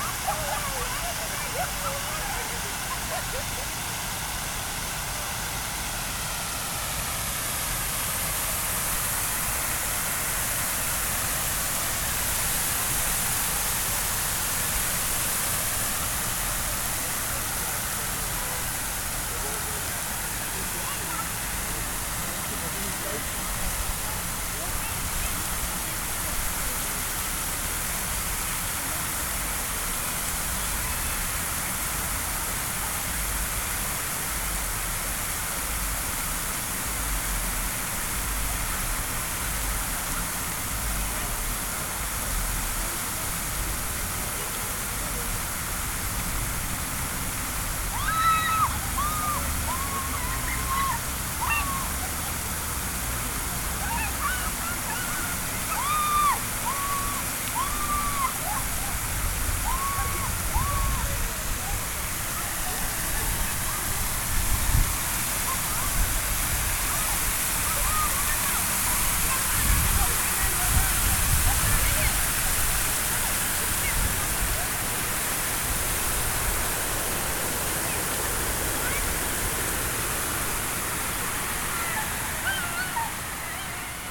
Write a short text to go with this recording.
Recorded with Zoom H4N at the Crown Fountain. It was 78 °F. There were around 20 tourists and visitors at the plaza. Young couples were taking selfies; around 5-6 children were playing in the fountain.